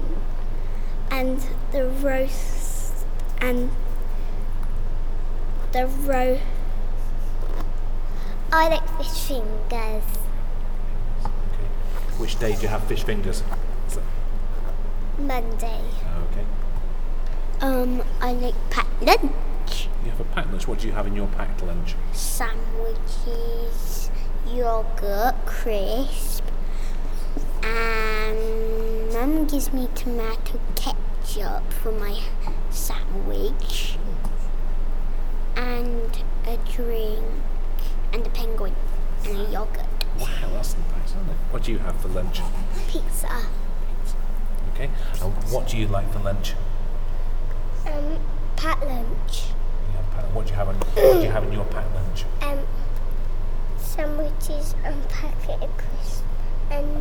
Sports Hall talking lunch with 1/2H
UK, 8 March 2011